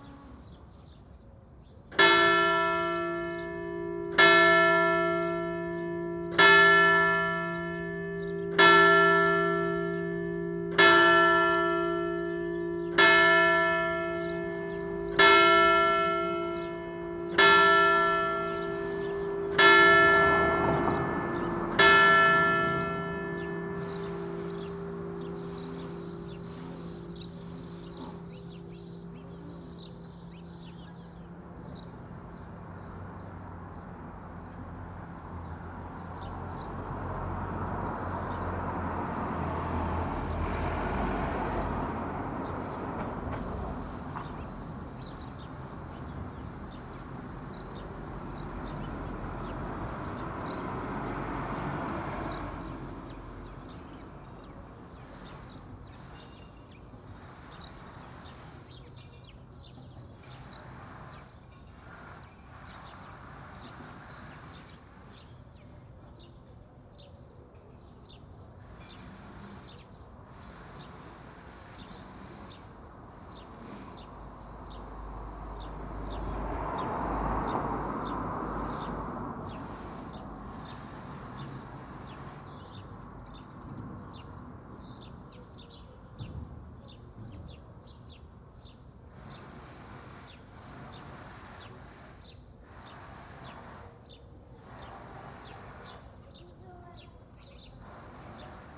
Rue Benoît Bressat, Niévroz, France - Bells at 10am
Bells, cars, bikes, birds.
Cloches, voitures, oiseaux et vélos.
Tech Note : Sony PCM-M10 internal microphones.